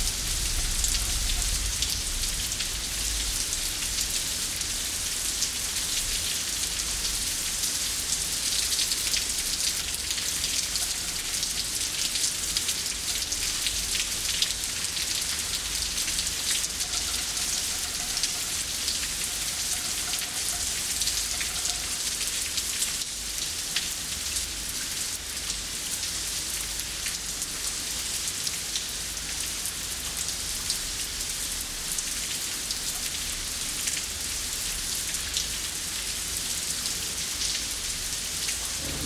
Recorded with a pair of DPA 4060s into a Marantz PMD661
Tarragona, Spain, 22 September 2017, 14:30